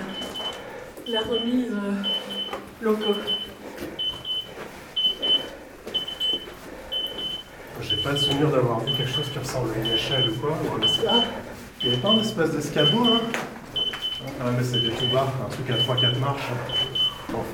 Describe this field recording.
Exploring a district in the underground mine where asphyxiant gas level is high. We have some Drager gas detectors. At 2:50 mn, the first detector is shouting, telling us it's dangerous. There's not enough oxygen (16% oxygen, this is 50% the oxygen you need in a normal level, and very too much carbon dioxide). We are going more far than dangerous, that's why it's shouting hardly during all the recording. In fact, we try to reach some stairs, written on the map, in aim to climb to an upper level. It's not very distant from the tunnel where we are. It would means a better air, because carbon dioxide is heavier than air. Unfortunately, the stairs are too far for us, going there would means to reach a district where oxygen level is 14%. This kind of level causes death within 5 to 10 minuts, no more. It means we encountered a defeat and we can't explore an entiere district where there's an enormous stone crusher (written on the map as a gigantic machine).